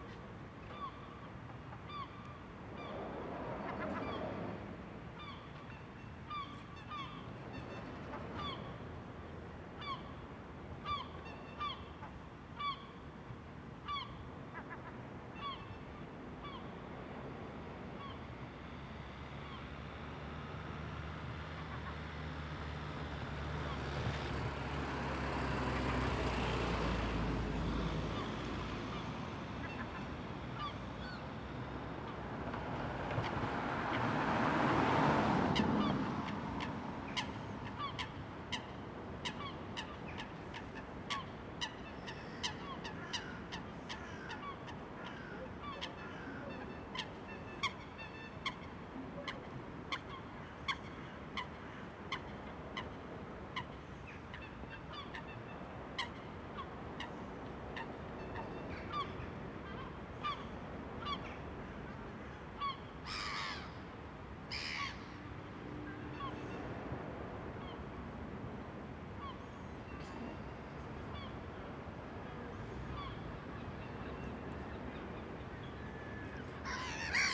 recorded with KORG MR-2, seagulls